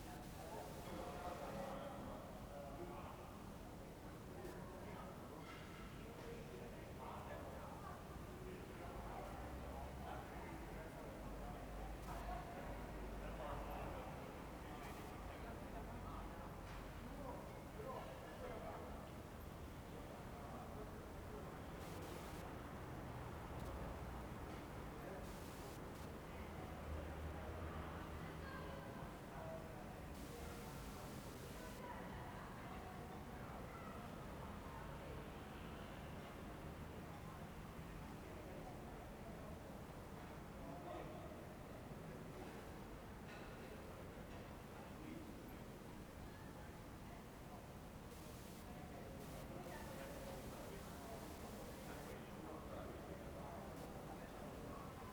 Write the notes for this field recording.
"Terrace one hour after sunset last April’s day walking around with radio in the time of COVID19" Soundscape with radiowalk, Chapter CLXX of Ascolto il tuo cuore, città. I listen to your heart, city, Friday, April 30th, 2021. Fixed position on an internal terrace at San Salvario district Turin, one year and fifty-one days after emergency disposition due to the epidemic of COVID19. I walked all-around at the beginning with my old National Panasonic transistor radio, scanning MW from top (16x100) to down (5.3x100) frequencies. One year after a similar recording on the same date in 2020 (61-Terrace at sunset last April day). Start at 9:25 p.m. end at 9:58 p.m. duration of recording 33'33'', sunset time at 8:32 p.m.